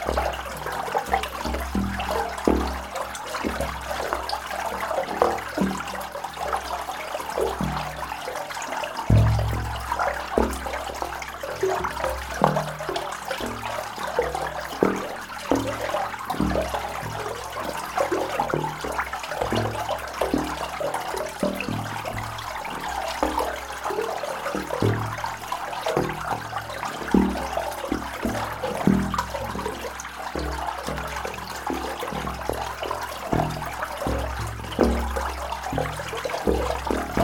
Differdange, Luxembourg - Singing pipe
This is one of the many singing pipe you can find in the abandoned iron mines. On this evening, water level was very high and I made a big dam, in aim to make the pipe sings. Without the dam, it was flooded. This is definitely not the best singing pipe, but this is a rare one where air is good and where I can stay more than 2 minutes. On the other places I know, air is extremely bad (and dangerous). That's why I made a break here, recording my loved pipe, seated on the cold iron ground. Could you think that exactly now, when you're hearing this sound, the pipe is still singing probably a completely different song, because of a constantly changing rain ? I often think about it. How is the song today ?
February 2018